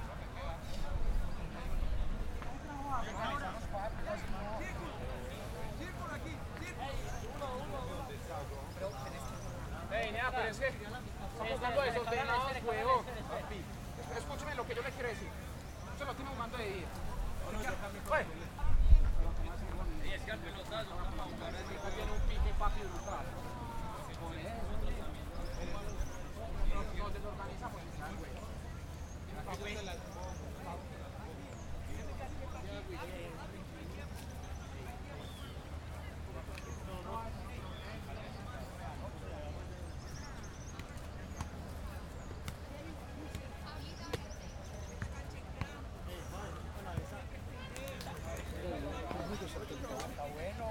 Cl., Medellín, Antioquia, Colombia - Cancha de fútbol Universidad de Medellín
Partido de fútbol (con momento de descanso en medio) en un día soleado, con poco público, sin que estén llenas las gradas.
Sonido tónico: Conversación, gritos, pasos corriendo.
Señal sonora: Pito del árbitro, gritos más duros al haber posibilidad de gol.
Tatiana Flórez Ríos - Tatiana Martínez Ospino - Vanessa Zapata Zapata